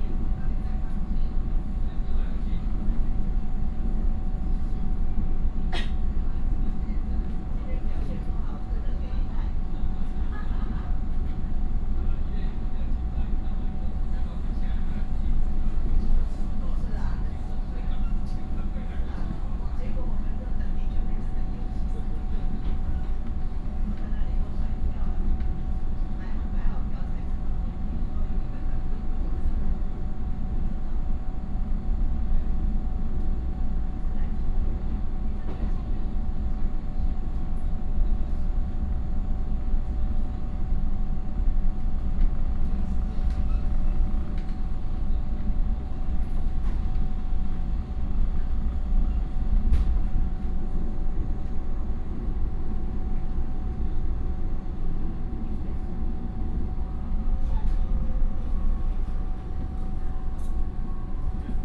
Zhudong Township, Hsinchu County - Regional rail
from Liujia Station to Zhuzhong Station, Train message broadcast